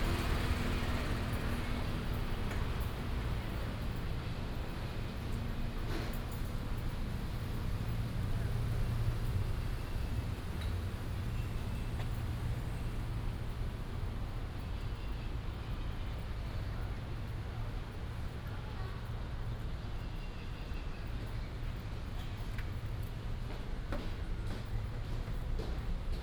Ln., Chenggong 1st Rd., Keelung City - Walking through the rail underpass
Traffic Sound, Walking through the rail underpass, Traveling by train
4 August 2016, ~08:00